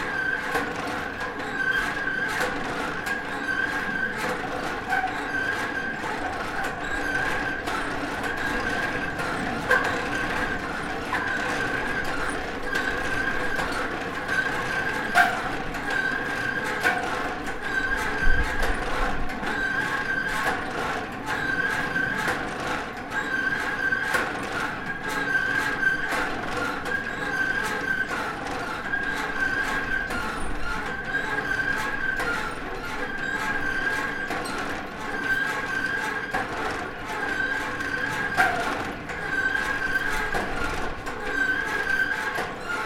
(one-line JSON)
{"title": "Morning Star Ridge, Lamy, NM, USA - Squeaky Windmill Pumping Water in a New Mexico Desert", "date": "2020-02-20 13:00:00", "description": "Listen to this giant old rusty windmill speed up and slow down as the wind helps it pump water for thirsty cattle in this gorgeous high desert of New Mexico.", "latitude": "35.47", "longitude": "-105.93", "altitude": "1939", "timezone": "America/Denver"}